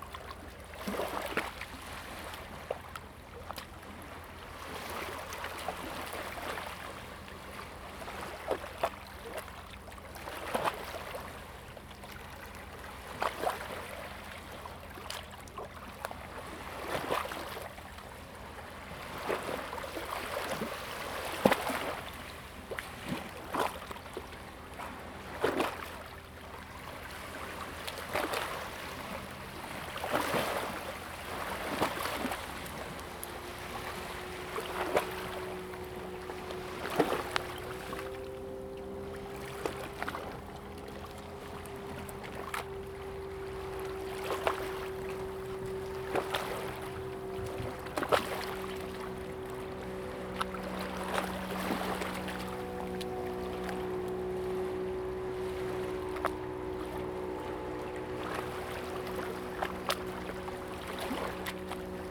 杉福漁港, Liuqiu Township - Tide and Wave
Small fishing port, Tide and Wave, Small beach
Zoom H2n MS+XY
Pingtung County, Taiwan, November 2014